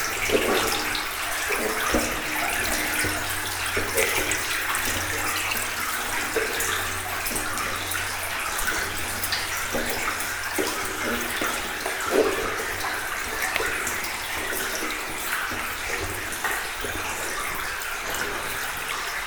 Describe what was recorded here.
The Magéry staircase is a very exhausting stairway descending into the deep mine. This stairway was used by russian prisoners during the World War II, under the german constraint. Russian prisoners, essentially women, were descending into the mine, in aim to work there. It was extremely difficult for them. This recording is when I climb the stairs.